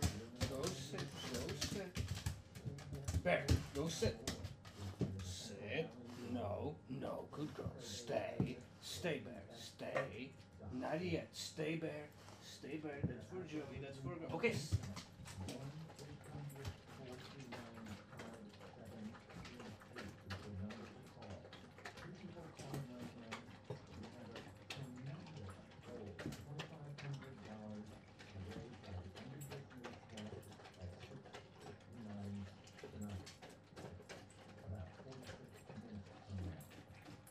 Mountain blvd.Oakland - feeding the dogs with KPFA
feeding the dogs while listening yet another pledge for your money from
evolutionary radio KPFA from Berkeley
Alameda County, California, United States of America, 25 March 2010, 03:20